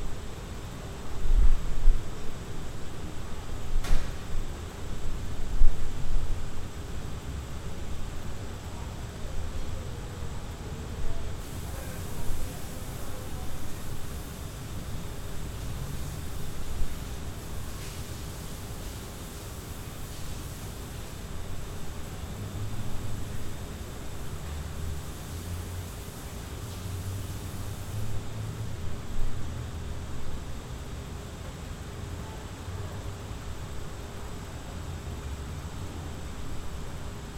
Cra., Medellín, Belén, Medellín, Antioquia, Colombia - inocencia fantasma
Este parque infantil se encuentra solo porque recién llovíó alrededor de las 5:45. DE allí se puede
deslumbrar la soledad que representa la ausencia de las inocentes almas de los niños jugando y
disfrutando de sus jóvenes vidas
4 September 2022, ~17:00